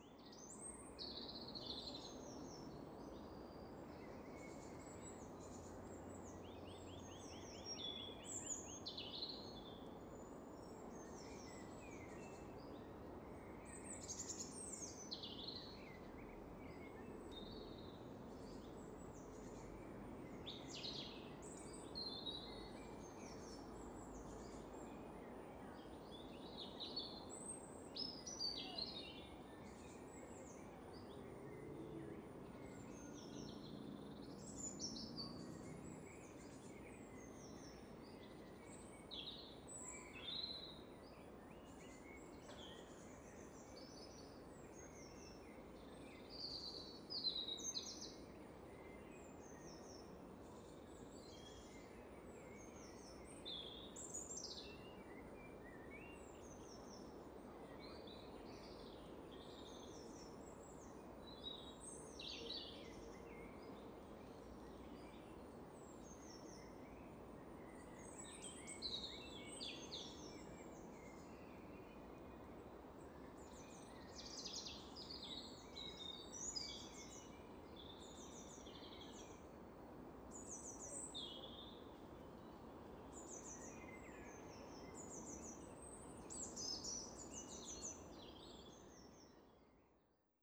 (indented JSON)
{
  "title": "Dulwich Wood",
  "date": "2010-04-16 16:20:00",
  "description": "Recorded during the flypath closure week due to the ash cloud.\nRecorder: Edirol R4 Pro\nMicrophones: Oktava MK-012 in Bluround® setup",
  "latitude": "51.43",
  "longitude": "-0.07",
  "altitude": "97",
  "timezone": "Europe/London"
}